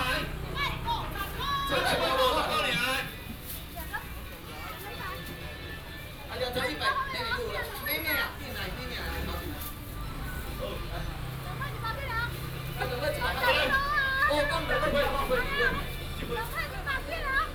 丸正鮮魚行, Minsheng Rd., Houli Dist. - Seafood selling
Seafood selling
Binaural recordings
Sony PCM D100+ Soundman OKM II